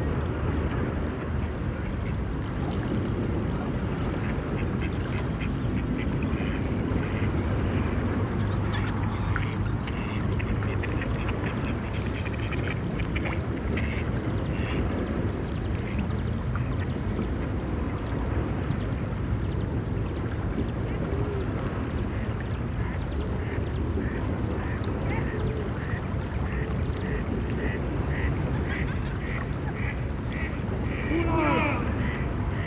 River Odra waterfront, Słubice, Polen - River Odra waterfront facing West, Slubice / Frankfurt (Oder) - echoes and eight rowers
River Odra waterfront facing West, Slubice / Frankfurt (Oder) - echoes and eight rowers. Voices of children echoing over the water surface, sports rowers train in an eight oars with coxswain. [I used the Hi-MD-recorder Sony MZ-NH900 with external microphone Beyerdynamic MCE 82]